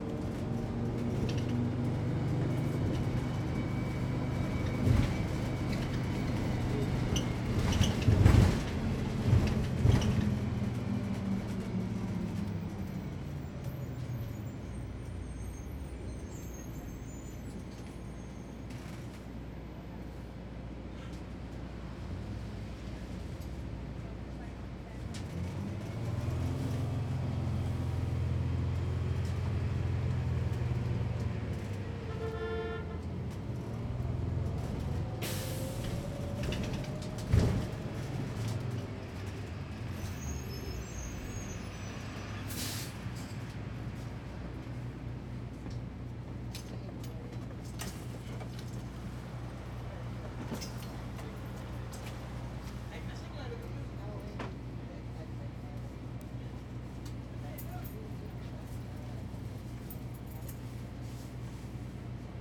Montreal: Ave du Parc: Beaubien to Bernard (bus) - Ave du Parc: Beaubien to Bernard (bus)
equipment used: zoom h2
I sat on a bus that squeaked like crazy.